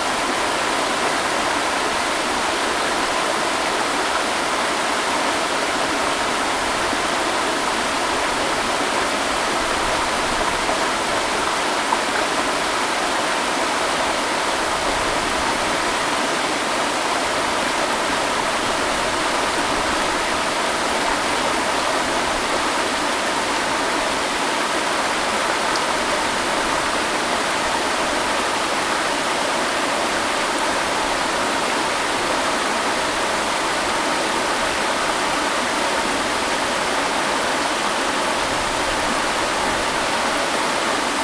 五峰旗瀑布, Jiaoxi Township, Yilan County - Waterfalls and Stream
Waterfalls and rivers
Zoom H2n MS+ XY
Jiaoxi Township, Yilan County, Taiwan, 7 December, ~11:00